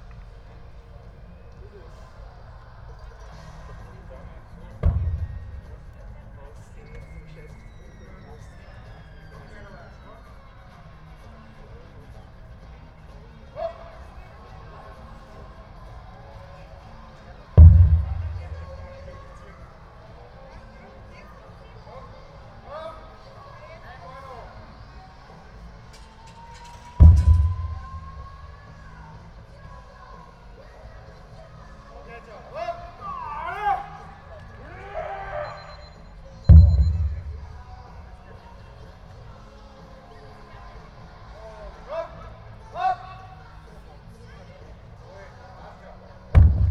Hertzstraße, Linz, Austria - Keep fit class with tyre booms and heavyrock
Keep fit class for adults resounding between 2 concrete walls. The booming is a huge very heavy rubbertyre falling after it's been turned over.
Oberösterreich, Österreich, 8 September 2020, 19:00